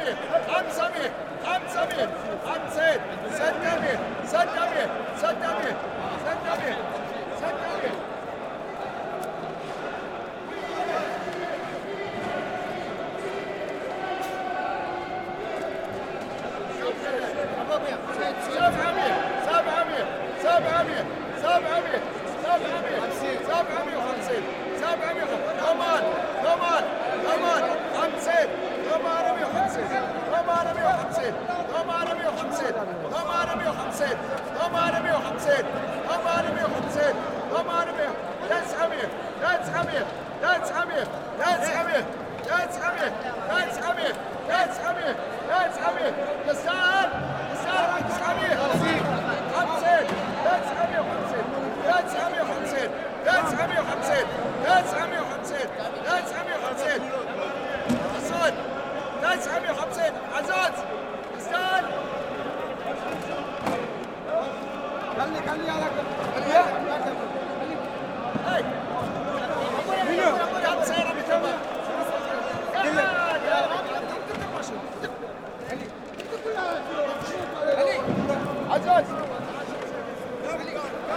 Sharjah - United Arab Emirates - Fish Auction
Every day at 5pm, the fish merchants display their catches in a circular space in the entrance of Souq Al Jubail. This recording is walking amongst the merchants as they shouted out their prices.
5 August 2016